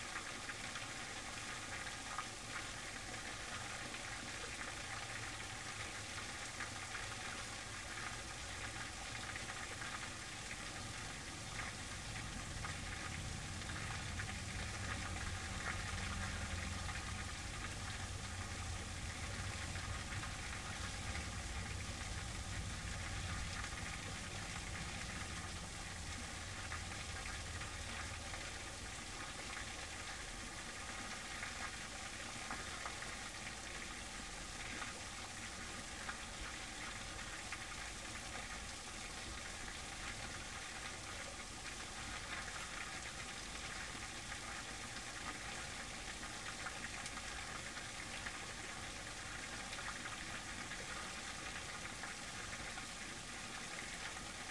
Panemune, Lithuania, little dam
interesting sound of falling water in a small dam